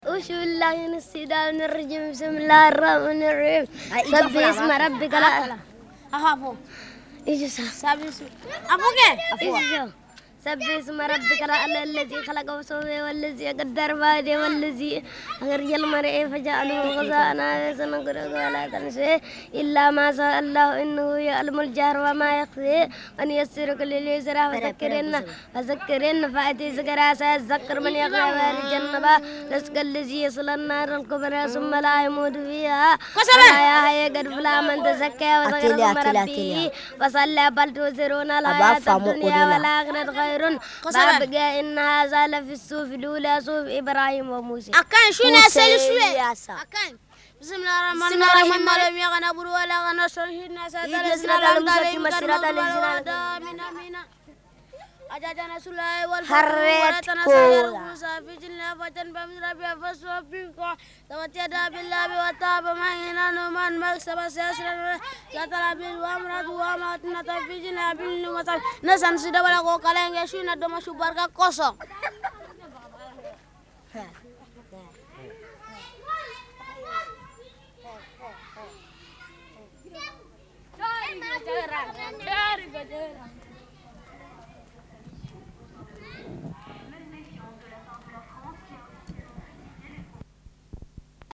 Unnamed Road, Markala, Mali - Turbo Quran 2
Turbo Qur'an 2 young boy recits surah